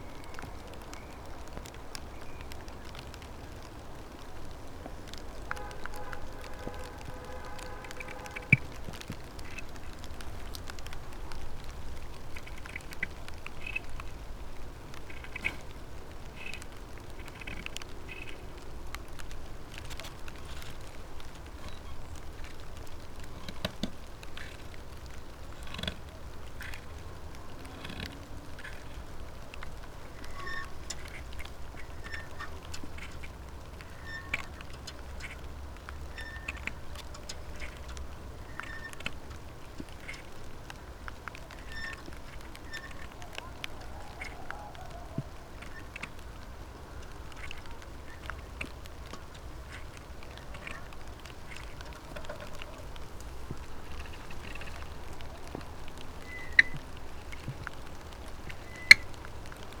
path of seasons, vineyard, piramida - soft rain, wires
12 August 2014, ~20:00